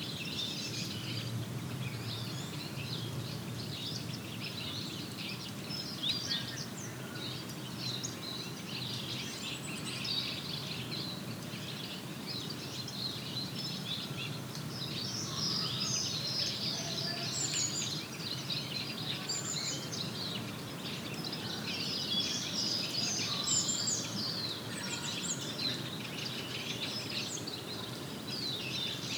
Court-St.-Étienne, Belgique - Redwing colony
A lot of city noises (cars, trains, planes, chainsaws, walkers) and behind the hurly-burly, a colony of Redwing, migratory birds, making a stop into this small pines forest.